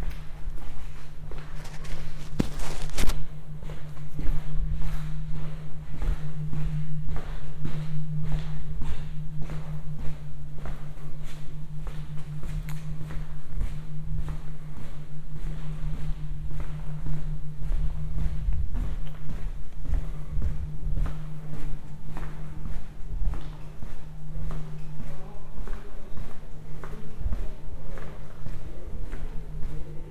Cuenca, Cuenca, España - #SoundwalkingCuenca 2015-11-20 Soundwalk through the Fine Arts Faculty, Cuenca, Spain
A soundwalk through the Fine Arts Faculty building, Cuenca, Spain.
Luhd binaural microphones -> Sony PCM-D100